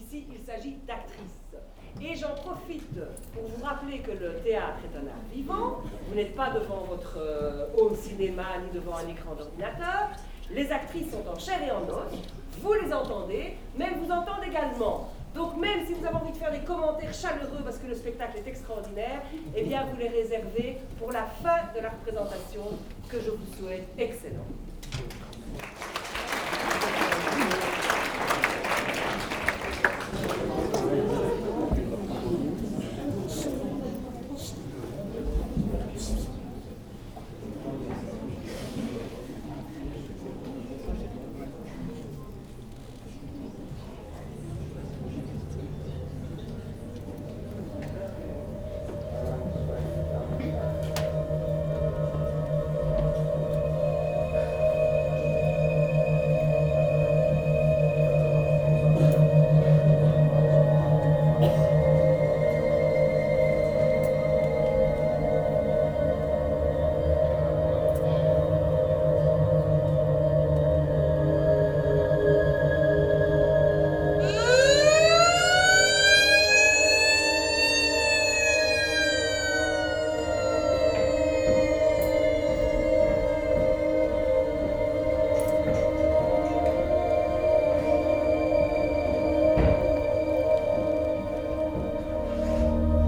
This recording is the beginning of a theatrical performance. This is the true story of four women doing the minesweeper in Lebanon. As this theater is important in local life, it was essential to include it in the Louvain-La-Neuve sonic map. The short sound of music is free. Theater administratives helped me in aim to record this short moment. The real name of the drama is : Les démineuses.
Ottignies-Louvain-la-Neuve, Belgium